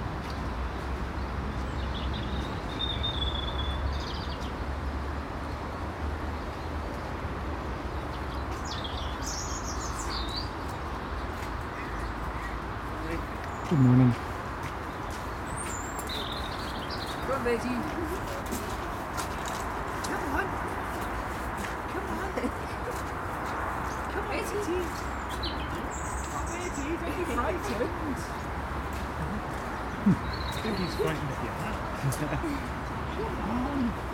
Contención Island Day 12 inner southeast - Walking to the sounds of Contención Island Day 12 Saturday January 16th
The Drive Moor Crescent Moorside Little Moor Jesmond Dene Road
A westie
is scared of my hat
and has to be dragged past by her owner
At the end of the lane
a couple
unload pallets from the boot of their car
into the allotments
Treetop starlings call